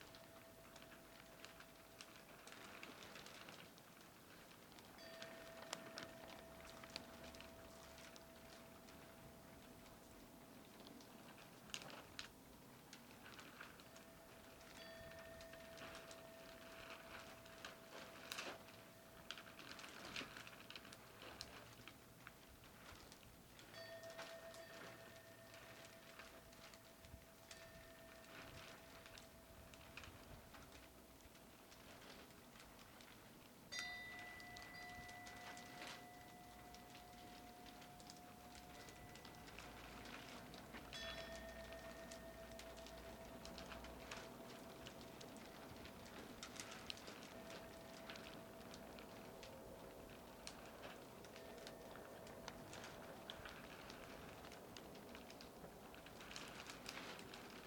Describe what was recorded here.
During the winter the Samak-san temple is wrapped in large sheets of plastic to protect its aging wooden structure. The night wind moves the wind chimes that hang from the eaves of the main temple. Heavy vehicle sounds sometimes come up the valley from far below.